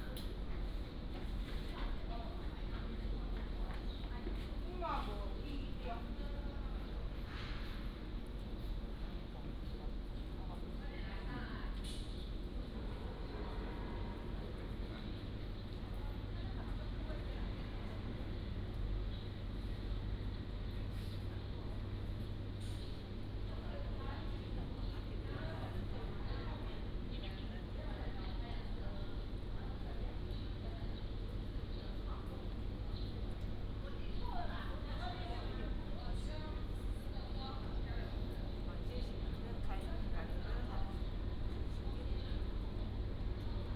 Lukang Longshan Temple, Lukang Township - Walking in the temple
Walking in the temple
Changhua County, Taiwan, 15 February